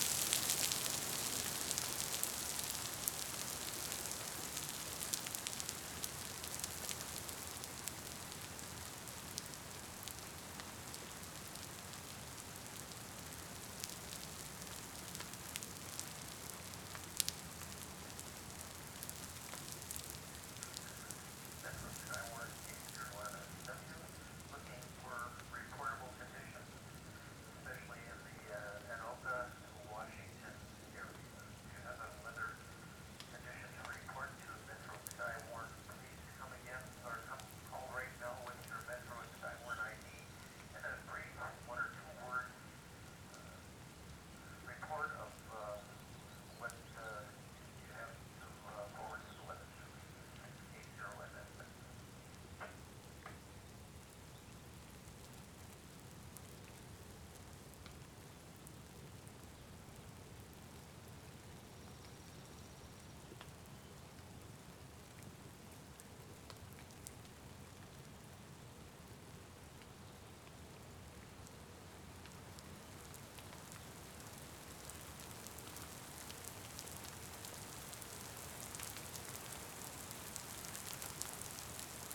Waters Edge - Watching a Storm Come in
Listen along as I watch a mid morning severe warned storm come through. Theres some wind initially then a hard rain falls. The local Skywarn net can be heard from my radio. Fortunately there was no hail or damage.